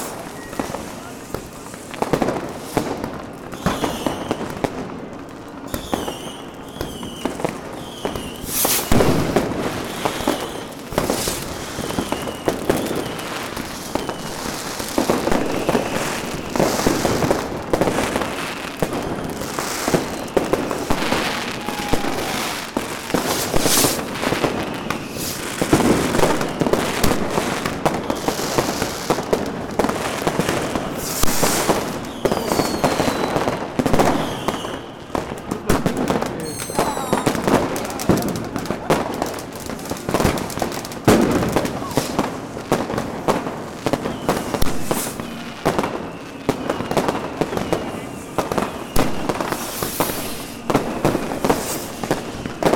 cologne, neusserstrasse, agneskirche, platz - Fireworks on New Year's Eve
Fireworks in the night of New Year's Eve, church bells of St. Agnes, people sharing new years wishes